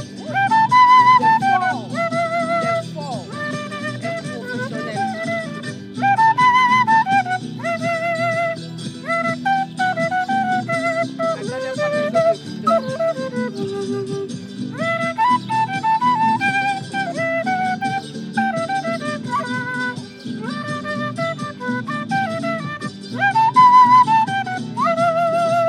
Maybachufer, Berlin, Deutschland - Flute player from Chile busking
A flute player from Chile performing by the canal to a playback track.
Sunny Sunday, summer has just started, after a humid midsummer night.
Recorded on a Sony PCM100